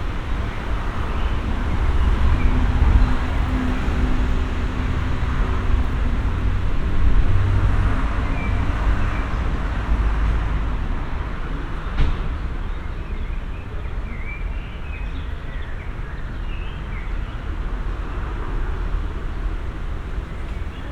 berlin, mariendorfer damm: spielplatz - the city, the country & me: playground
small park with playground nearby noisy street, birds vs. cars
the city, the country & me: june 8, 2012